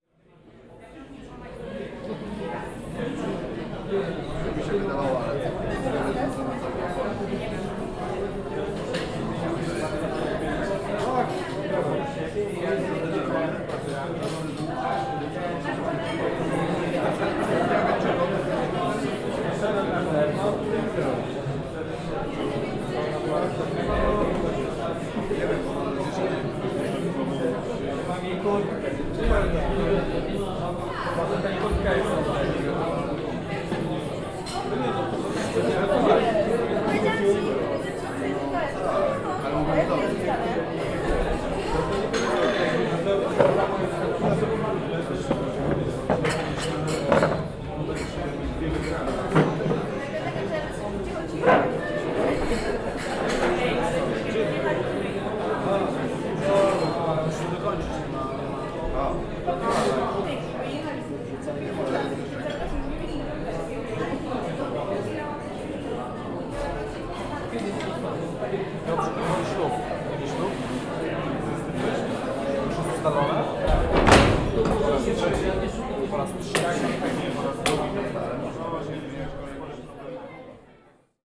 U Huberta, Český Těšín, Czech Republic - (-156) Busy restaurant u Huberta
Stereo recording of a busy restaurant: on the Czech side of Cieszyn, but full of polish people due to the film festival "Cinema on the Border".
Recorded with Zoom H2n